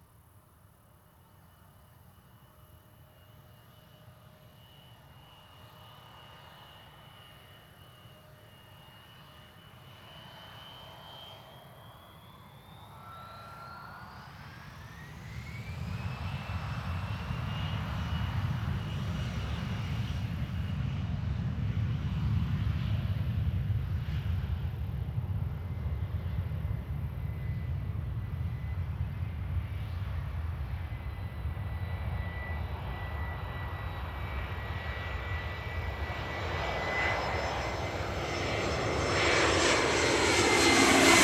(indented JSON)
{
  "title": "MSP 12R Approach - MSP 12R Approach 2022-07-10 1915",
  "date": "2022-07-10 19:15:00",
  "description": "Recorded directly under the final approach for Runway 12R at Minneapolis/St Paul International Airport. Aircraft are no more than a couple hundred feet off the ground at this point. Planes departing on 17 can also be heard. Theres some noisy birds that can be heard as well.",
  "latitude": "44.89",
  "longitude": "-93.24",
  "altitude": "247",
  "timezone": "America/Chicago"
}